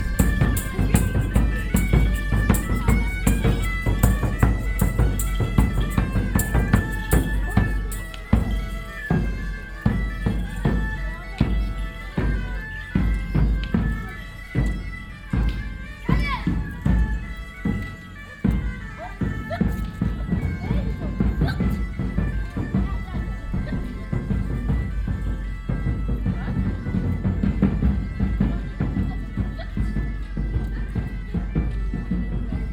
vianden, grand rue, medieval parade and street talk
In the late evening on the main road of the village. A group of showmen in medieval costumes celebrating a musical procession. At the end a street talk of two village residents.
Vianden, Hauptstraße, Mittelalterliche Parade und Straßengespräche
Am späten Abend auf der Hauptstraße der Stadt. Eine Gruppe von Verkäufern in mittelalterlichen Kostümen feiert eine musikalische Prozession. Am Ende ein Straßengespräch von zwei Ortsbewohnern.
Vianden, grand rue, parade médiévale et discussions de rue
Le soir sur la route principale du village. Un groupe de forains en costumes médiévaux pendant un défilé musical. Discussion entre deux habitants du village au bout de la rue.
Project - Klangraum Our - topographic field recordings, sound objects and social ambiences